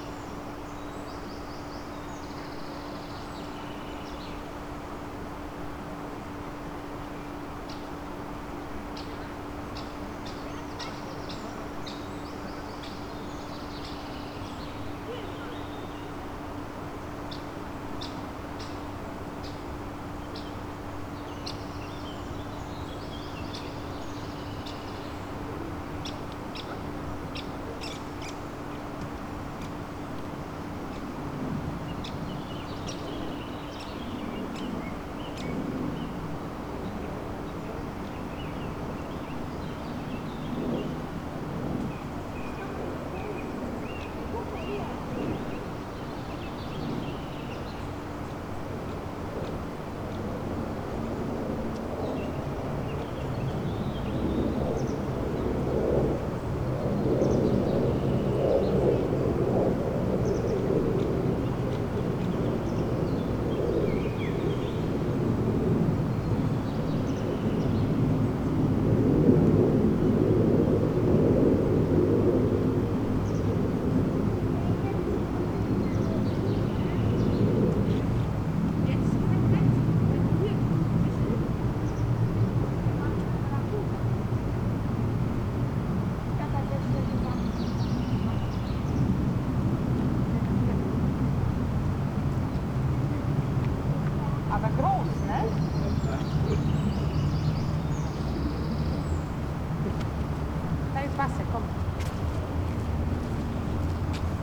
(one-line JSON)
{"title": "solingen-müngsten: müngstener brückenweg - the city, the country & me: on the bank of the wupper", "date": "2011-06-18 17:31:00", "description": "strange situation: this place has really changed in recent years. what you see on the maps no longer exists. so it was difficult to find the correct position. sound of the wupper river and the nearby weir, tourists and a plane crossing the sky...\nthe city, the country & me: june 18, 2011", "latitude": "51.16", "longitude": "7.14", "altitude": "123", "timezone": "Europe/Berlin"}